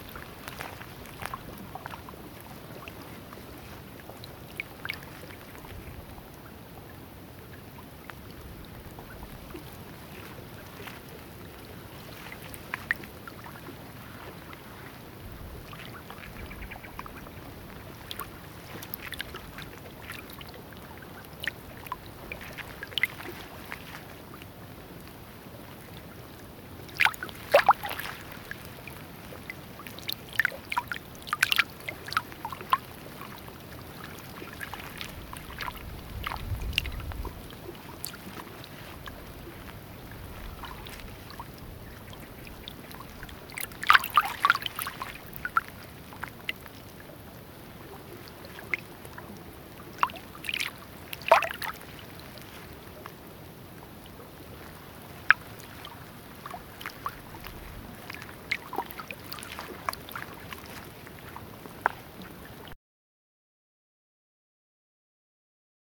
Freixiosa, Portugal
Freixiosa, Miranda do Douro, Portugal. Mapa Sonoro do Rio Douro Douro River Sound Map
19 February 2014